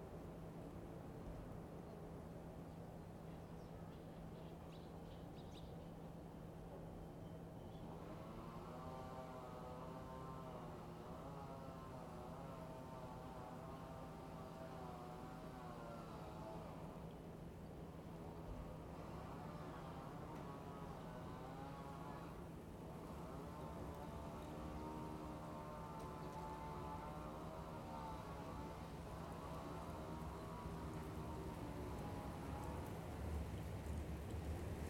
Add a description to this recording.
Recorded from my room window. Living approx. 15 meters from railroad tracks, I get greeted everyday by subway trains and shinkansens. Recorded with Zoom H2n